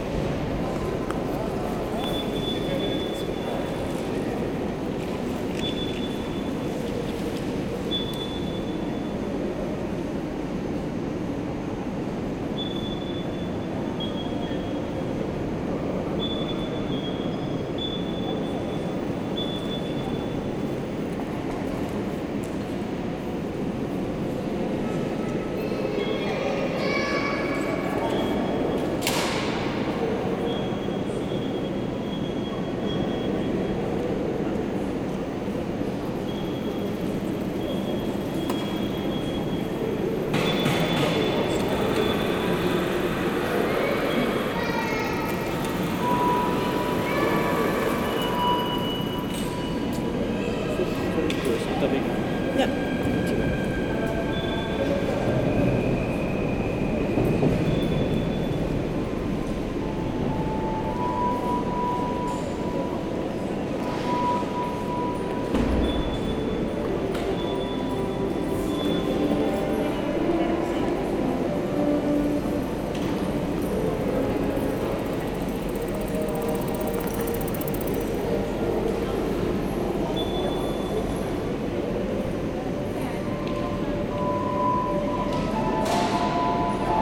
Maastricht, Pays-Bas - Red light signal
The red light indicates to pedestrian they can cross the street. It produces a sound which is adaptative to the number of cars, a camera films the traffic. As this, sometimes the duration is long, other times it's short.